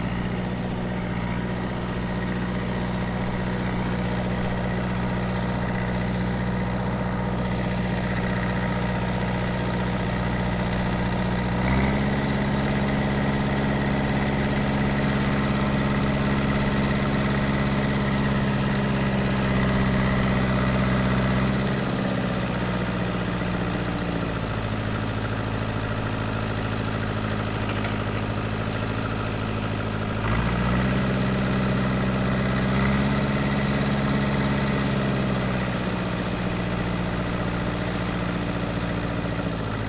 Morasko, field road near train tracks - tractor
man working with a tractor, gathering some cut down branches into a trailer. (sony d50)
Poznań, Poland, 8 February